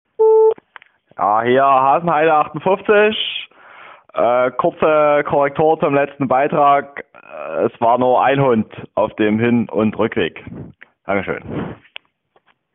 {
  "title": "Fernsprecher Hasenheide 58 - Münzfernsprecher Hasenhaide 58",
  "latitude": "52.49",
  "longitude": "13.41",
  "altitude": "41",
  "timezone": "GMT+1"
}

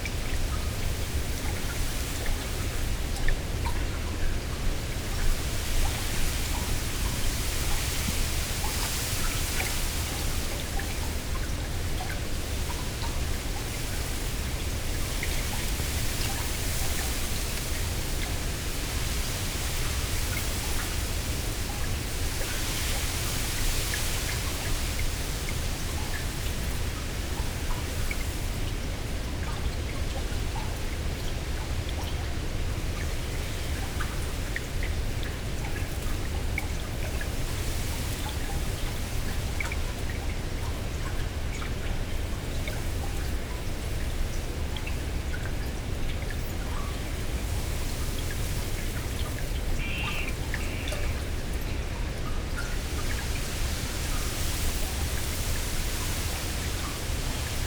{
  "title": "Westwood Marsh, United Kingdom - Strong wind in reeds above and below water",
  "date": "2020-07-12 17:00:00",
  "description": "Reeds grow in water; the tall stems and leaves catch the sun and wind above, while the roots are in the mud below. This track is a mix of normal mics listening to wind in the reeds combined with a mono underwater mic - in sync and at the same spot - picking up the below surface sound. The very present bass is all from the underwater mic. I don't know what creates this, maybe its the movement of the whole reed bed, which is extensive, or the sound of waves pounding the beach 300meters away transmitted through the ground.",
  "latitude": "52.30",
  "longitude": "1.65",
  "altitude": "1",
  "timezone": "Europe/London"
}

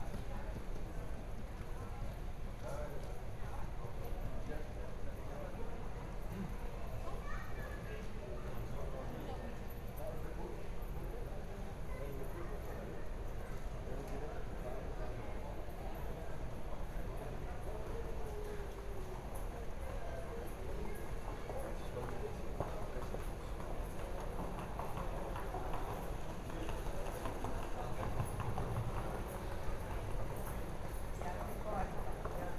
{
  "title": "Vertrekpassage, Schiphol, Nederland - Inside The Schiphol departure lounge during Corona lockdown",
  "date": "2020-06-25 09:30:00",
  "description": "Recording has been made inside the Schiphol departure lounge number 2. Minimal traffic due to the Corona Lockdown.\nRecorder used is a Tascam DR100-MKlll. Recorder was left for about 10 minutes on a servicedesk.",
  "latitude": "52.31",
  "longitude": "4.76",
  "altitude": "8",
  "timezone": "Europe/Amsterdam"
}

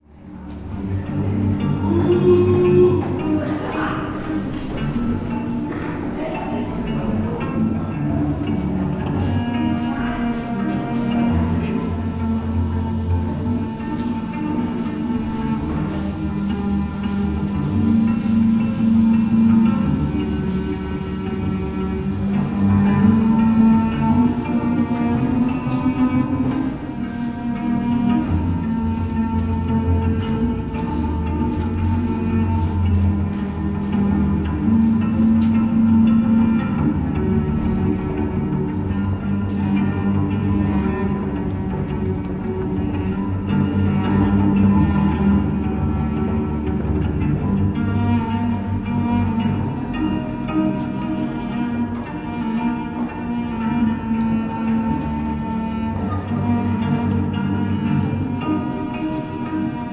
{
  "title": "berlin: u-bahnhof schönleinstraße - U8 cello player",
  "date": "2011-03-04 18:40:00",
  "description": "cello player at subway station U8 Schönleinstr., Berlin",
  "latitude": "52.49",
  "longitude": "13.42",
  "altitude": "42",
  "timezone": "Europe/Berlin"
}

